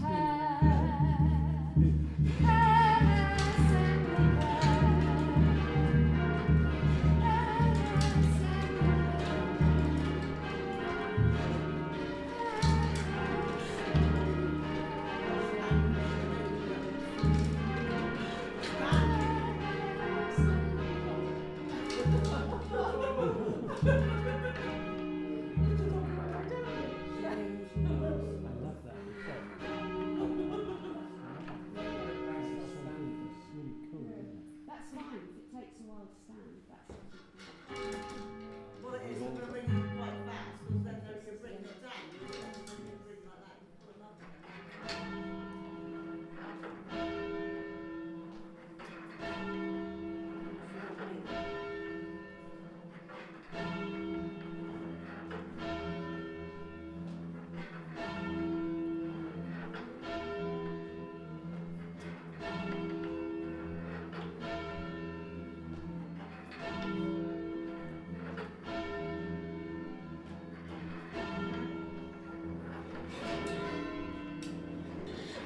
Sammy Hurden and Andy Baker with the Powerstock Ringers in rehearsal
Dorset, UK, 22 November 2012